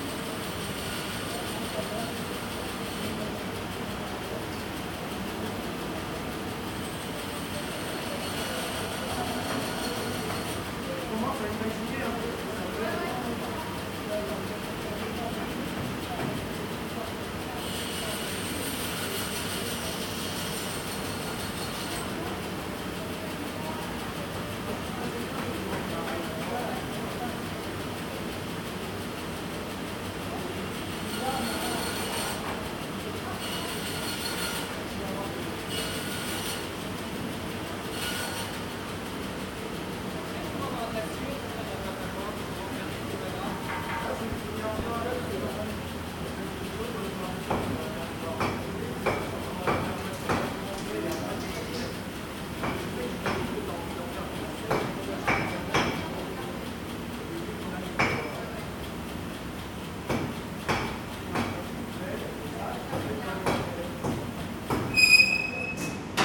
Different sounds in the wood workshop. You hear the ventilation, someone chiselling at a block of wood, a bandsaw, a nail gun, and voices.
Recorded on ZOOM H1
Nice, France, 4 November 2014